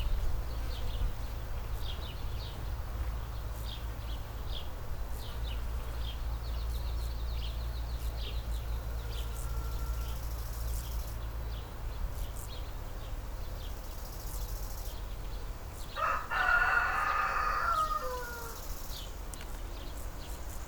Srem, Andrew's house, backyard - backyard in the morning
Gmina Śrem, Poland, 12 August 2012